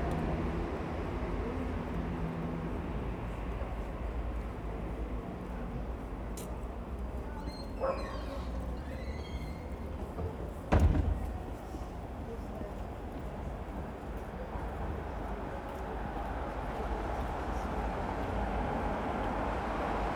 Anderlecht, Belgium - Traffic in Av Francois Malherbe
Louder traffic in this busier street. After walking past the quiet areas and gardens you definitely notice the difference here. The close cars prevent one from from hearing into the distance. Sound has closed in again.